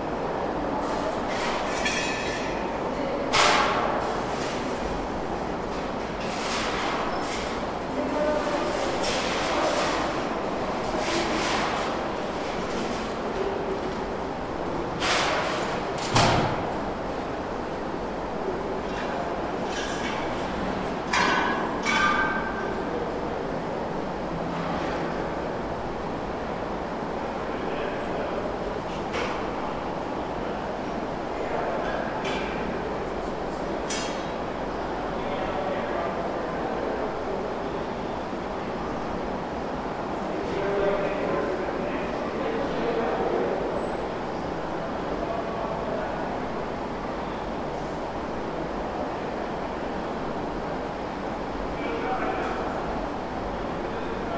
Standing outside the Metal Shop